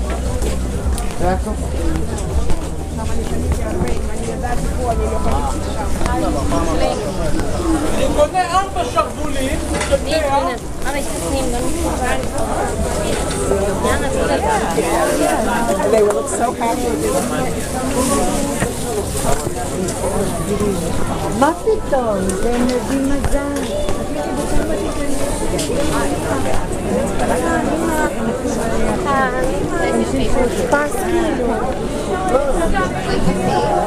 carmel-market, tel-aviv/yafo - carmel-market
a walk starting at Shafar 10, where a Cafè named שפר is, heading to the market, going right hand till the end at Magen David Square. Takes about 9 minutes.